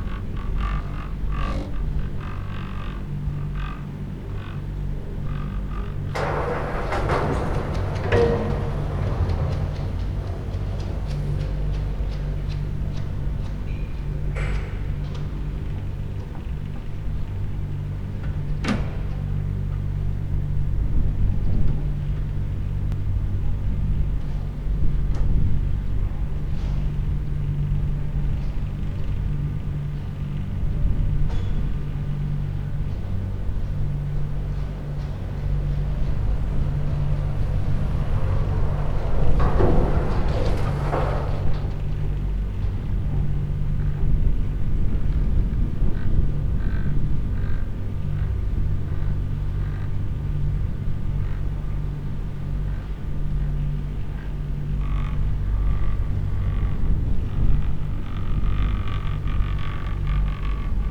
Feldberg - cable car
sounds of moving cable cars arriving and departing the station at the top of the hill. hum of the machinery and moving cables. although recorded on open space it sounds as if was recorded in a big hall.
Feldberg, Germany, 10 September 2014